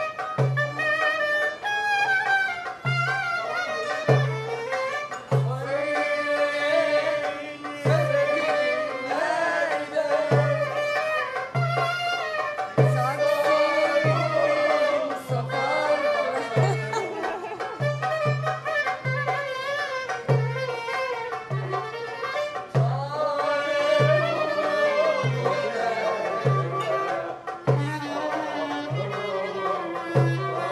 Taksim, singing the songs
Winter is coming in in Istanbul and Taksims famousroof top terrace are not as crowded anymore. Thus we get the chance to actually listen to the songs of the musicians still wandering from bar to bar. Whoever is inebriate and excitable enough stands up and accompanies the singing. Maybe also those, that don understand a word, the foreigner, yabanci.
18 October 2010, 21:23